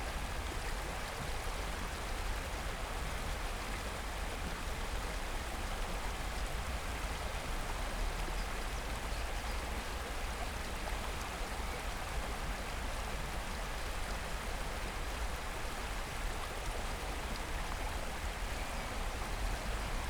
Wuhletal, Biesdorf, Berlin - river Wuhle, train passing-by
Berlin, near S-Bahn station Wuhletal, water flow of river Wuhle, a freight train passing-by
(SD702, DPS4060)